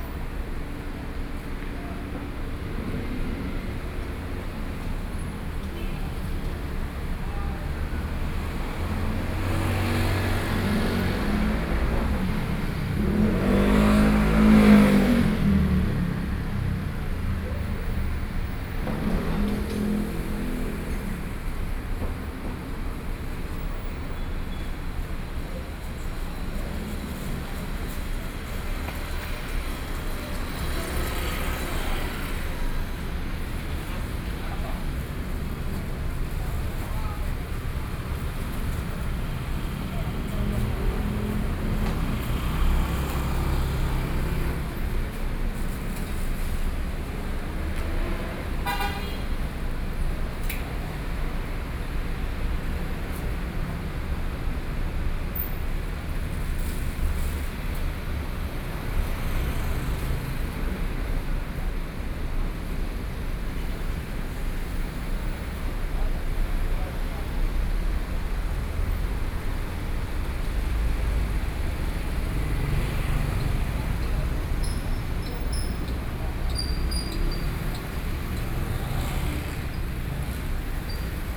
Traffic Noise, Sony PCM D50 + Soundman OKM II
楊梅鎮 Taoyuan County, Taiwan